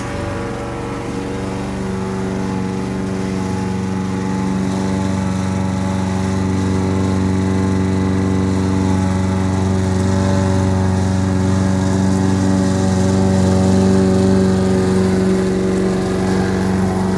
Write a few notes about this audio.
recorded june 30th, 2008. project: "hasenbrot - a private sound diary"